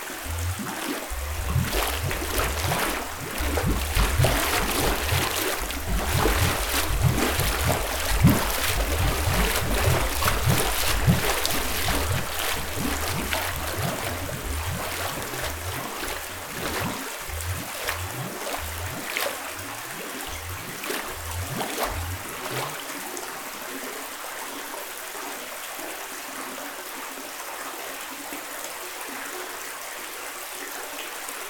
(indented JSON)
{
  "title": "Gembloux, Belgique - Strange bubbles",
  "date": "2015-01-18 17:45:00",
  "description": "In an underground mine, a very strange bubbles system, in a water tank. This is natural and this comes back naturally every 50 seconds. But why ??? In fact, it was funny.",
  "latitude": "50.51",
  "longitude": "4.72",
  "altitude": "166",
  "timezone": "Europe/Brussels"
}